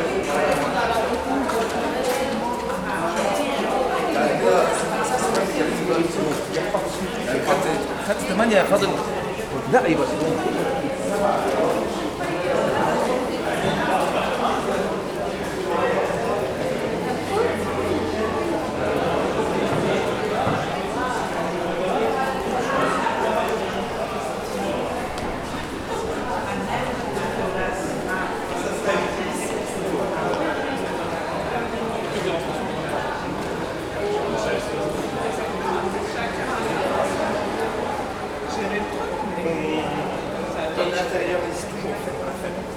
Passage des Arbalétriers, Saint-Denis, France - Centre Commercial Basilique
This recording is one of a series of recording mapping the changing soundscape of Saint-Denis (Recorded with the internal microphones of a Tascam DR-40).